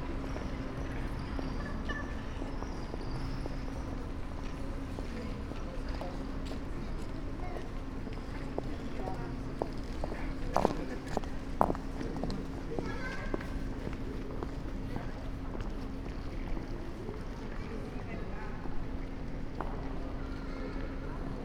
Gr. Steinstr / Gr. Ulrichstr., Halle (Saale), Deutschland - tram traffic triangle
Sunday evening, a traffic triangle, almost no cars, but many trams passing-by, pedestrians in between.
(Sony PCM D50, Primo EM172)